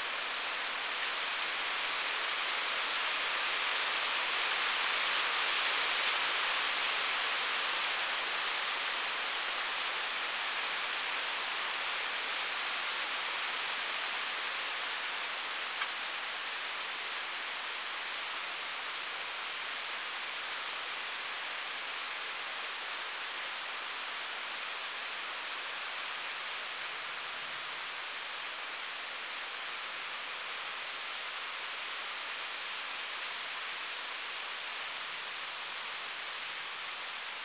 Jordan Road is a gated Forest Service Road in the George Washington National Forest. Recorded half a mile or so past the western gate, on the southern slope just below the road. Tascam DR-05; Manfrotto tabletop tripod; Rycote windscreen. Mics angled upward to catch the rustle of the wind in the canopy. Percussive sound is hickory nuts dropping in the wind.
VA, USA, 13 July 2018